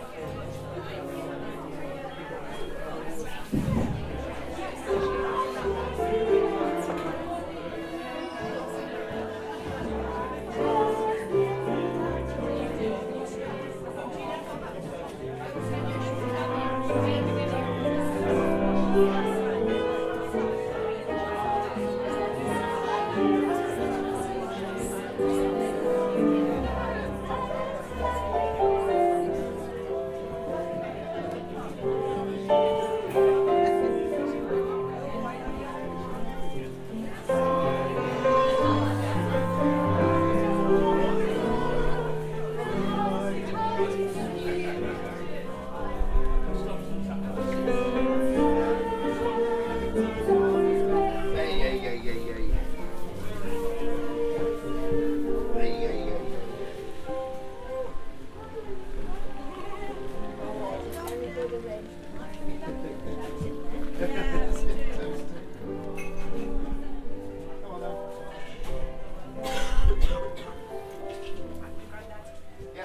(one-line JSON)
{"title": "The Terrace, Lydham, Bishops Castle, UK - Friday market", "date": "2019-12-20 11:38:00", "description": "Friday town market just before Christmas, 2019. Carolers in the corner, and general hub-bub of people buying produce, etc.", "latitude": "52.51", "longitude": "-2.98", "altitude": "182", "timezone": "Europe/London"}